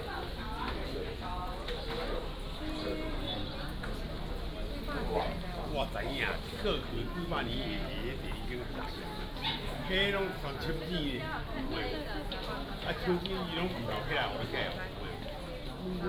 Chaotian Temple, Beigang Township - Walking in the temple inside
Walking in the temple inside